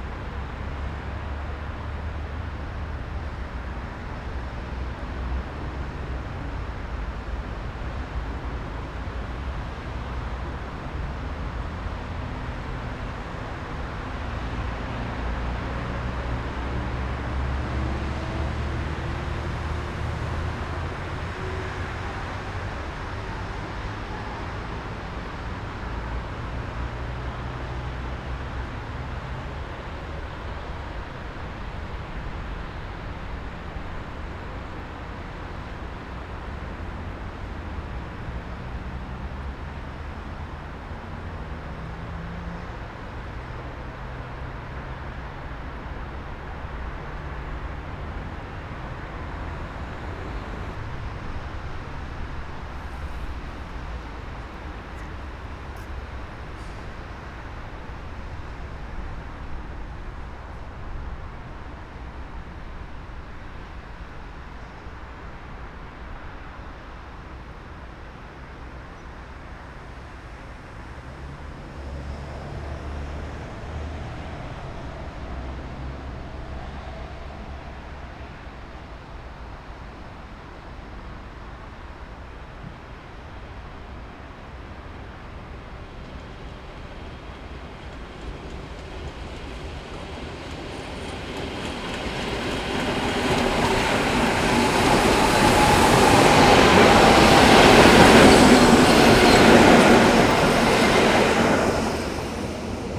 Olsztyn, Polska - West train station (2)
Train arrival in the middle. City rush. Snow is melting.
Olsztyn, Poland, 5 February 2013